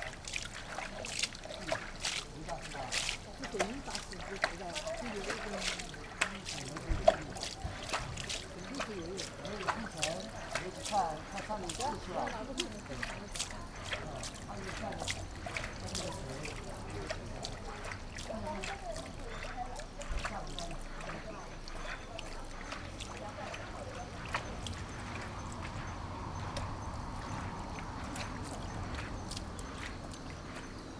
Sagaogurayama Tabuchiyamacho, Ukyō-ku, Kyoto, Kyoto Prefecture, Japonia - sweeping
a monk sweeping and washing the sidewalk in front of a shrine. (roland r-07)